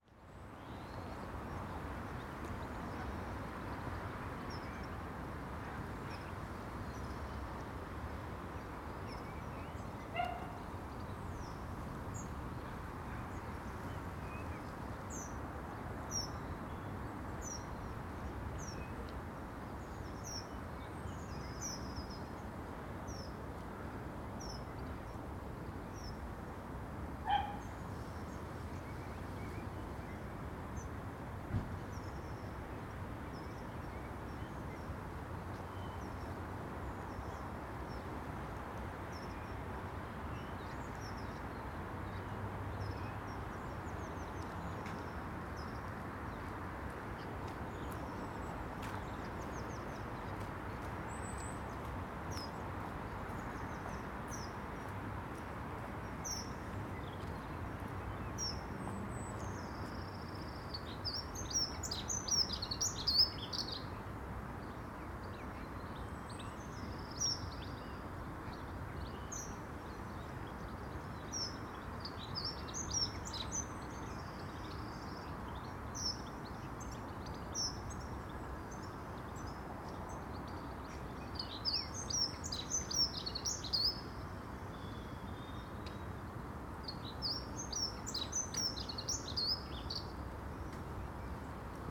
{"title": "Contención Island Day 47 outer northwest - Walking to the sounds of Contención Island Day 47 Saturday February 20th", "date": "2021-02-20 09:15:00", "description": "The Drive Westfield Drive Parker Avenue Brackenfield Road Thornfield Road Northfield Road Salters Road Yetlington Drive\nThree dog-walkers\nand a walking jogger\nA blackbird picks over leaves\nunderneath the bushes\nbehind me\na dunnock sings", "latitude": "55.00", "longitude": "-1.64", "altitude": "77", "timezone": "Europe/London"}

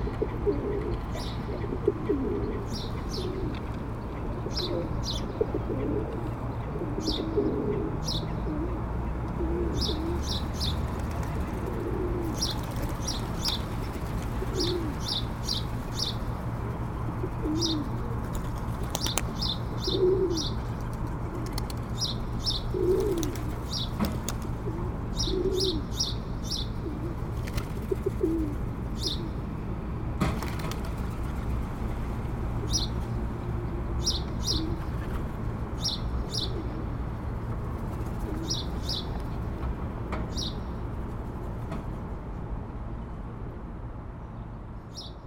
{"title": "Hamburg, Deutschland - Pigeons", "date": "2019-04-19 09:00:00", "description": "Binnenalster, Ballindamm. On the dock, pigeons flirting.", "latitude": "53.55", "longitude": "10.00", "altitude": "4", "timezone": "Europe/Berlin"}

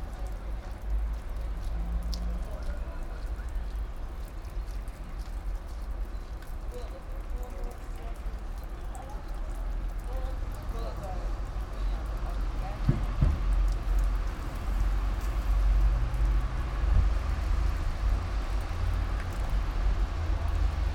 {"title": "all the mornings of the ... - jan 21 2013 mon", "date": "2013-01-21 09:34:00", "latitude": "46.56", "longitude": "15.65", "altitude": "285", "timezone": "Europe/Ljubljana"}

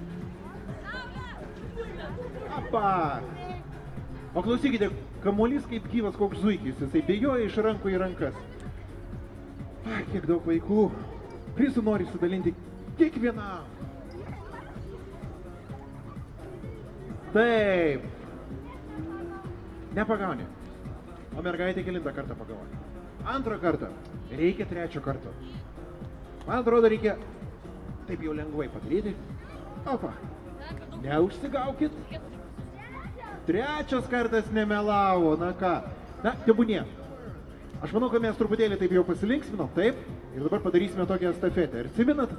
{"title": "Utena, Lithuania, a event for children", "date": "2012-07-18 16:35:00", "latitude": "55.50", "longitude": "25.60", "altitude": "102", "timezone": "Europe/Vilnius"}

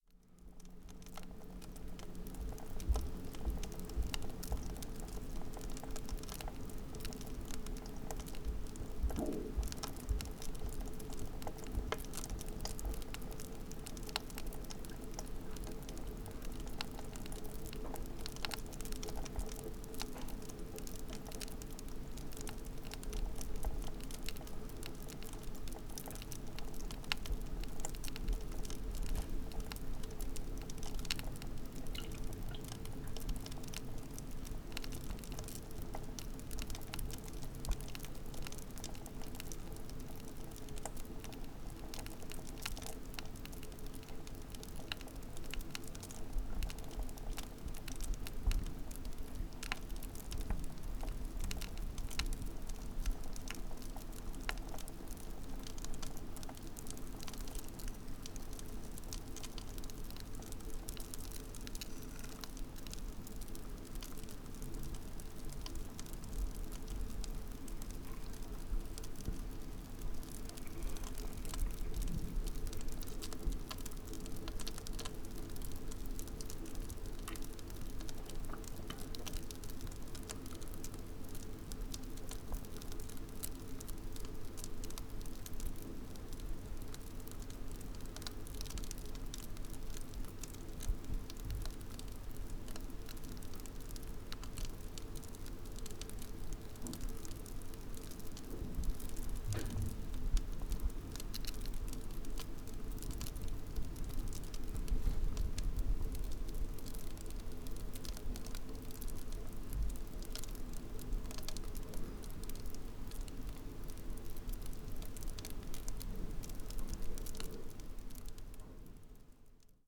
{"title": "Poznan, Piatkowo district - crackling foam", "date": "2012-08-09 19:21:00", "description": "crackling foam in the sink after washing dishes", "latitude": "52.46", "longitude": "16.90", "altitude": "97", "timezone": "Europe/Warsaw"}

